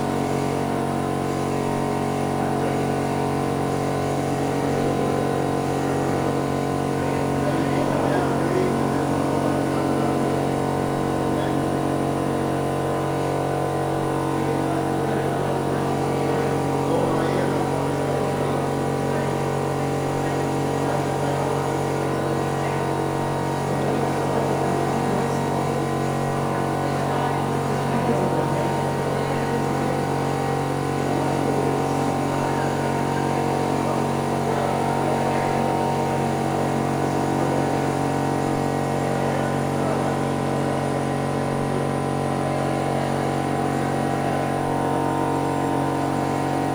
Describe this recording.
TradeAir installation opening by JAMES CHARLTON